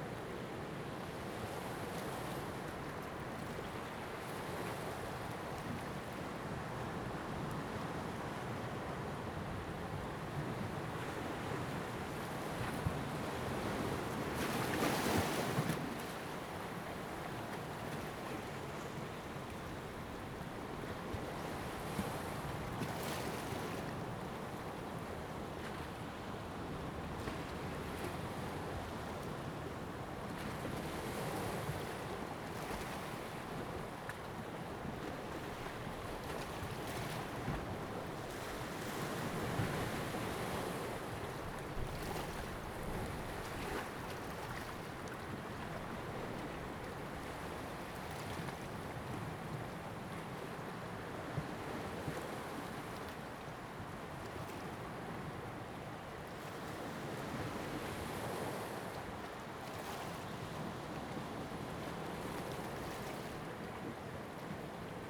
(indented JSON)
{
  "title": "蘭嶼鄉, Taiwan - sound of the waves",
  "date": "2014-10-29 08:06:00",
  "description": "sound of the waves\nZoom H2n MS +XY",
  "latitude": "22.07",
  "longitude": "121.51",
  "altitude": "17",
  "timezone": "Asia/Taipei"
}